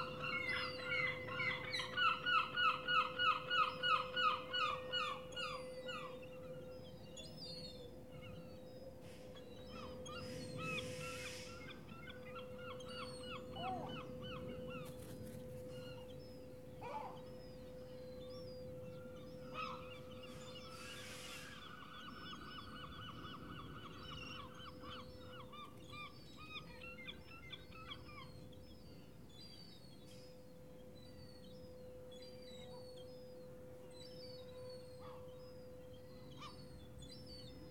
East Street, Rye, UK - Street cleaning and seagulls
As a street cleaning machine passes down a nearby street, the seagulls overhead start calling.
Zoom H4n internal mics.
1 August 2016